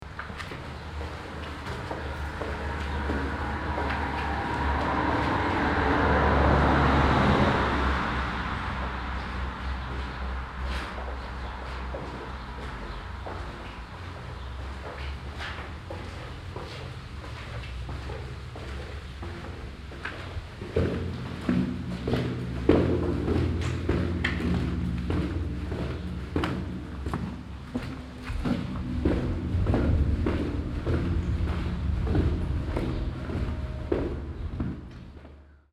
In einem Fussgängertunnel, der unter der Bundesstraße N17 verlaufend die beiden Ortsteile verbindet. Das Geräusch von Schritten im hallenden Gang des steinwandigen Tunnelgebäudes. Im Hintergrund ein Fahrzeug, das auf der oberen Straße den Tunnel überquert.
Inside a pedestrian tunnel under the N17 main street. The sound of steps reverbing in the brick wall tunnel building. In the distance a car crossing the street above.
Luxembourg, 7 August 2012, ~14:00